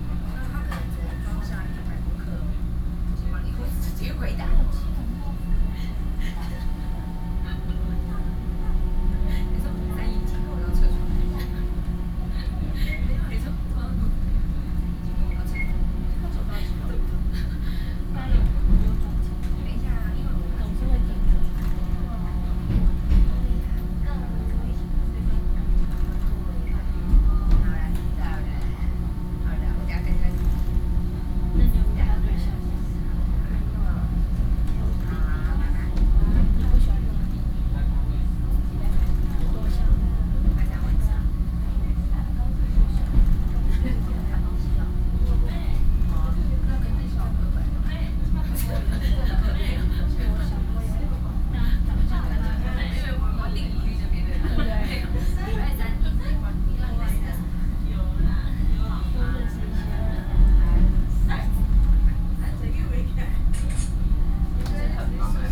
Ren'ai, Keelung - On the train
On the train, Train message broadcasting, Binaural recordings